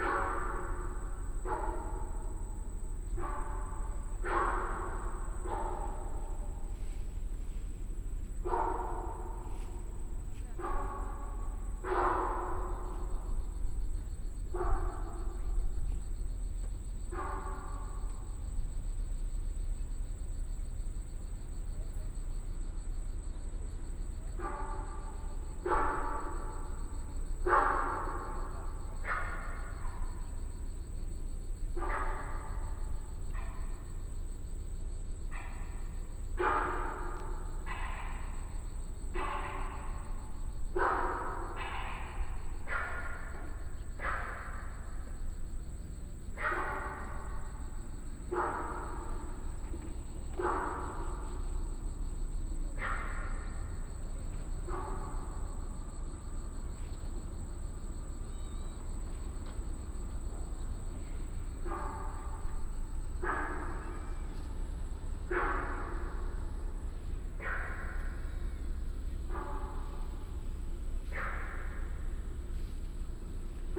Traffic Sound, Underground culvert, frog sound
Keelung City, Zhongzheng District, 八斗子海濱公園步道, 2 August 2016